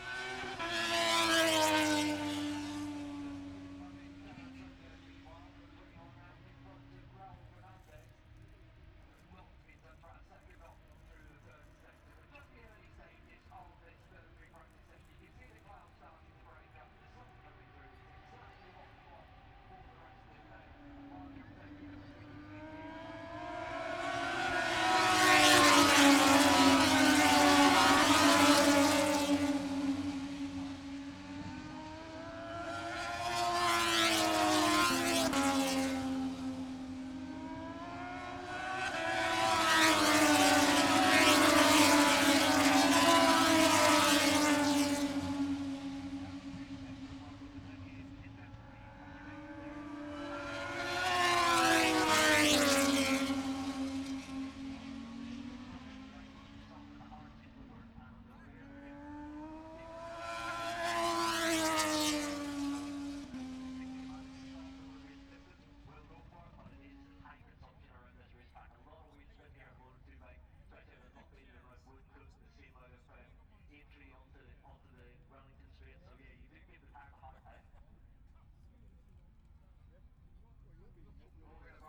Silverstone Circuit, Towcester, UK - british motorcycle grand prix 2021 ... moto two ...
moto two free practice three ... copse corner ... dpa 4060s to Zoom H5 ...
England, United Kingdom, 28 August 2021, ~11:00